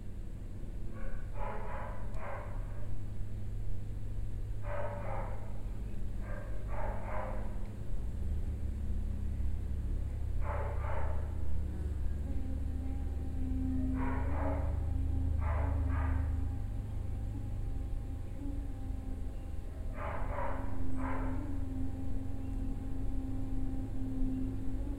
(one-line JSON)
{"title": "Salos, Lithuania, in the tube (amplified)", "date": "2018-09-09 17:35:00", "description": "some tube for rain water on the ground. small microphones in it. amplified silence.", "latitude": "55.81", "longitude": "25.37", "altitude": "102", "timezone": "Europe/Vilnius"}